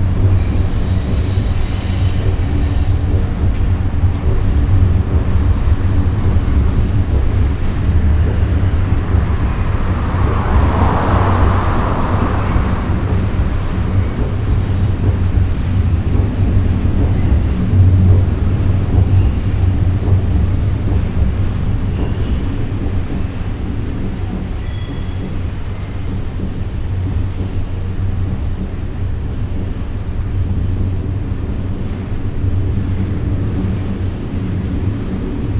Ostrava-Mariánské Hory a Hulváky, Česká republika - Pod ten most
Under the railway bridge.